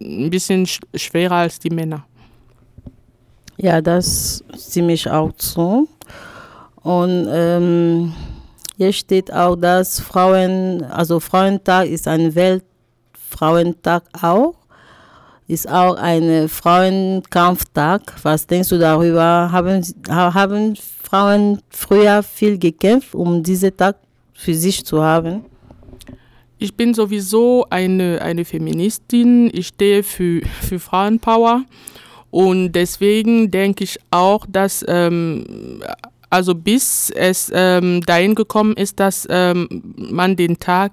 Office of AfricanTide Union, Dortmund - Frauen im Fordergrund....
...Marie talks to Raisa about the importance of International Women’s Day and asks for her experience; Raisa tells what she knows from her native Cameroon where her parents were involved in events for IWD every year...
Dortmund, Germany